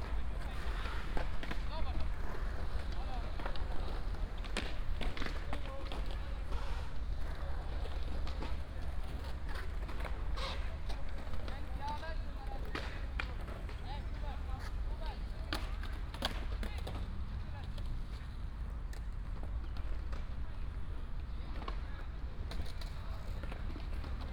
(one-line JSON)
{"title": "Poznan, downtown, Marcinkowski's Park - skatepark", "date": "2015-09-11 17:29:00", "description": "(binaural) skate park, a bunch of teenagers riding their skateboards, doing tricks on the ramps and rails, hanging out. (luhd PM-01/sony d50)", "latitude": "52.40", "longitude": "16.92", "altitude": "76", "timezone": "Europe/Warsaw"}